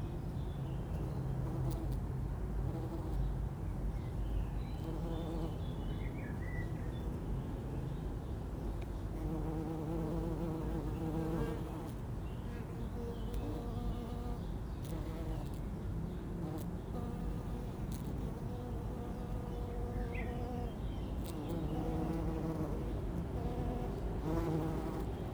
Sunday. This family grave has 8 Greek columns, not too high, around an octagonal shaped lawn of bright yellow flowers. Different bees buzz around collecting pollen in the sun, red bottomed, black and yellow stripped. The first train is one of the new S-Bahn designs, sadly not as interesting sounding as those being replaced.